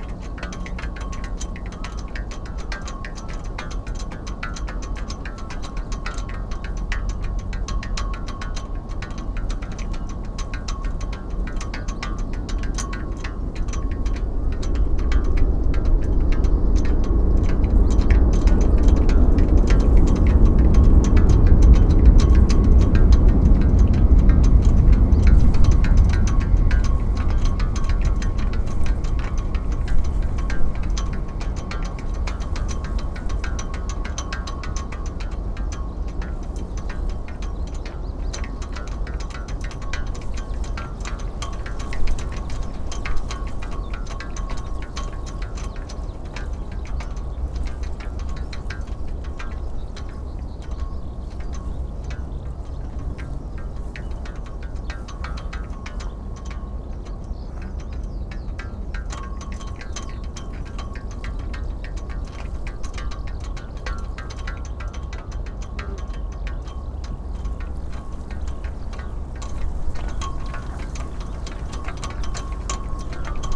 {
  "title": "Deba, Ritto, Shiga Prefecture, Japan - Flagpoles in Wind",
  "date": "2015-04-25 13:25:00",
  "description": "Ropes banging against metal flagpoles in a moderate north wind along the running track at Yasugawa Sports PArk. The Shinkansen passes twice during the recording.",
  "latitude": "35.05",
  "longitude": "136.01",
  "altitude": "100",
  "timezone": "Asia/Tokyo"
}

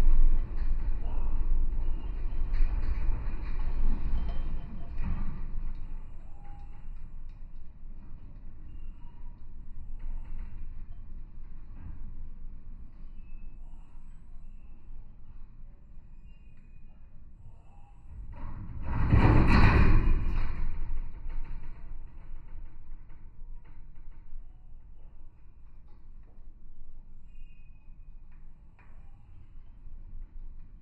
{
  "title": "Antakalnis, Lithuania, the fence at meadow",
  "date": "2020-05-31 14:05:00",
  "description": "high metallic fence at the side of the meadow.",
  "latitude": "54.50",
  "longitude": "24.72",
  "altitude": "128",
  "timezone": "Europe/Vilnius"
}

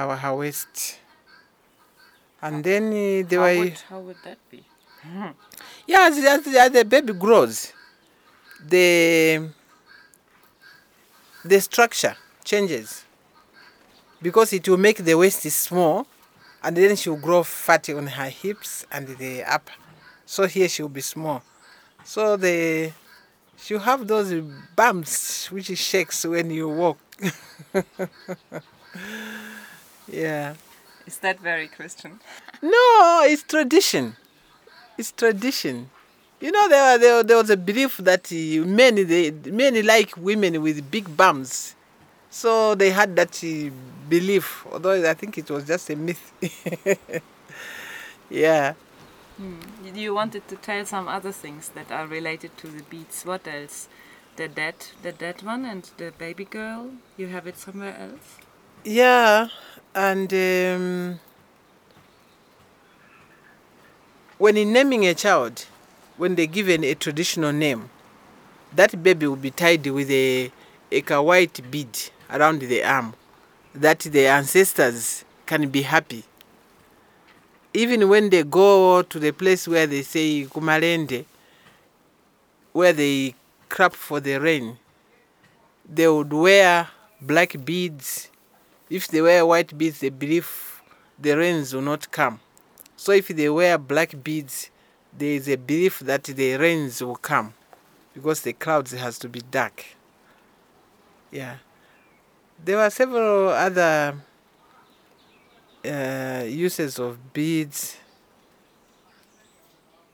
{
  "title": "Harmony, Choma, Zambia - After the Jibale Game...",
  "date": "2012-11-14 10:30:00",
  "description": "Esnart continues telling how she got to start beadwork as a young girl... picking up beads from the ground after the Jibale Game often played by old men in the villages...\nEsnart was the Crafts Manager and Crafts Development Officer at Choma Museum from 1995-2007, trained many people in workshops, organized crafts competitions and assisted in the production of exhibitions.",
  "latitude": "-16.74",
  "longitude": "27.09",
  "altitude": "1263",
  "timezone": "Africa/Lusaka"
}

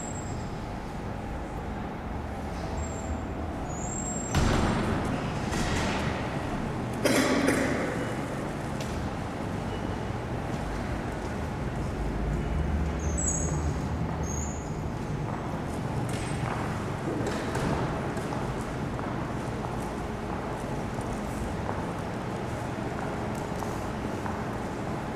{
  "title": "zürich 2 - bahnhof enge, halle",
  "date": "2009-10-13 16:00:00",
  "description": "bahnhof enge, halle",
  "latitude": "47.36",
  "longitude": "8.53",
  "altitude": "412",
  "timezone": "Europe/Zurich"
}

